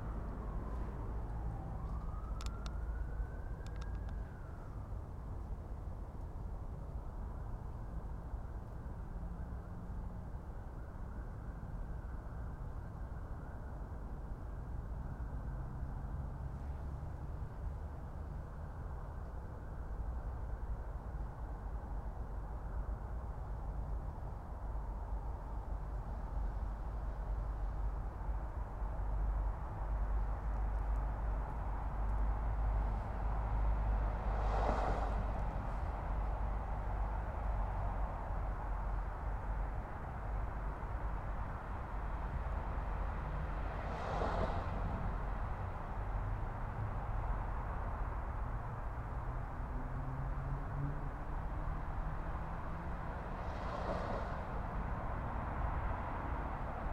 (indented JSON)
{
  "title": "East Park Road, Low Fell, Gateshead, UK - East Park Road facing West",
  "date": "2016-08-15 21:30:00",
  "description": "Facing West on East Park Road. Cars drive past on Saltwell Road. Train can be heard going south on East Coast mainline. Recorded on Sony PCM-M10.",
  "latitude": "54.94",
  "longitude": "-1.61",
  "altitude": "62",
  "timezone": "Europe/London"
}